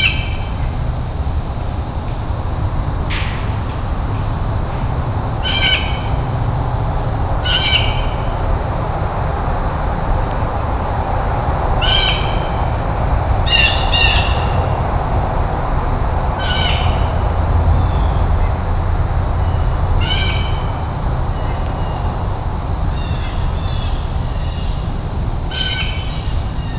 Maverick Concert Hall, Woodstock, NY, USA - Four minutes and thirty three seconds
A fall morning at the venue where John Cage's composition 4'33" was premiered on August 29 1952, performed by David Tudor. The concert hall was not open but I was interested to see what a minimal structure it is - bare boards with wide gaps between, no insulation for cold. Listening inside the Hall would be almost like being outside. It is also very beautiful in its simplicity.